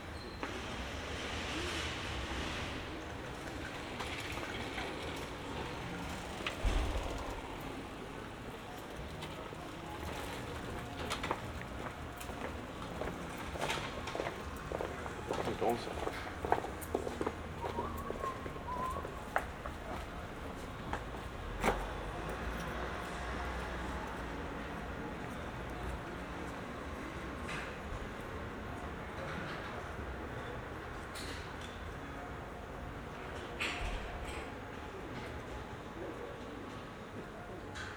berlin, friedelstraße: vor w. - the city, the country & me: in front of café w.
nice summer evening, i seemed to be the last guest of the wine café enjoying a last glass of wine while the waitress was busy inside
the city, the country & me: august 28, 2012